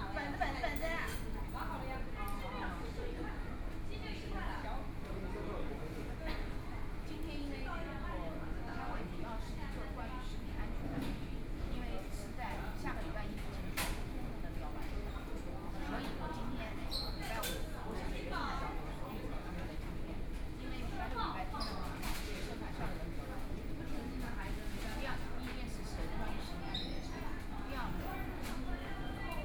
五角場, Shanghai - Fast food（KFC）
sitting in the Fast food(KFC), The crowd, Binaural recording, Zoom H6+ Soundman OKM II
Yangpu, Shanghai, China